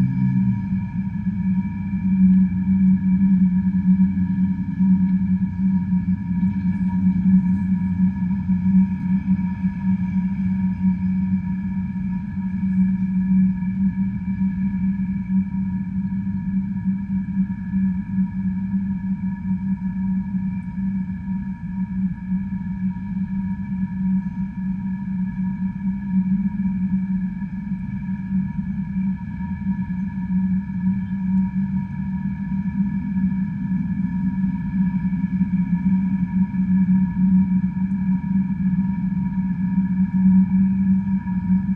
a discarded empty glass bottle sits in the grass alongside the ruined staircase. all recordings on this spot were made within a few square meters' radius.
Maribor, Slovenia, 27 August